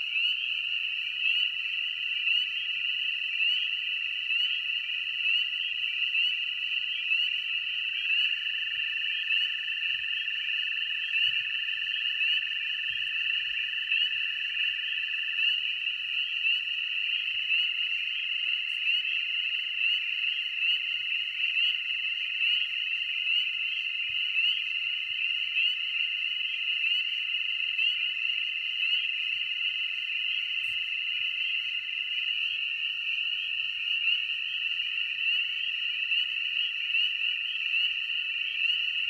Hardy, Arkansas; Frogs, Forest Ambience, Nighttime.